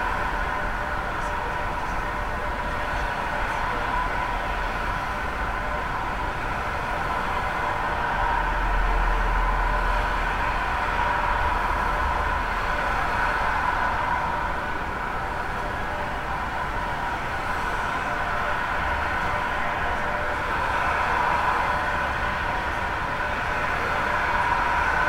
pedestrian bridge over Frankenschnellweg, Nürnberg/Muggenhof
recorded with contact mics during the sound of muggenhof workshop by Cramen Loch and Derek Holzer